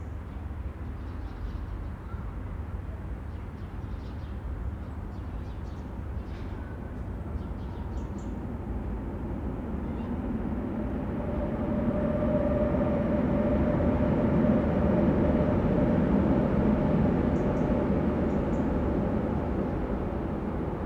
Wassertorstraße, Berlin, Germany - U-Bahn reverberation from multiple directions
At this point the different gaps and surfaces of the apartment buildings channel the sound of passing trains in different directions. There are multiple versions all at once. It is like a Picasso cubist painting, but in sound.